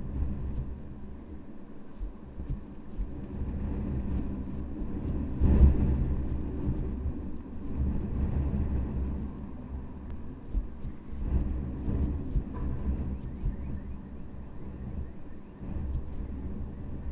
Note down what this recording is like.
wind tumbling in the air vent. the dust filter detached itself from the grating and is flapping back and forth.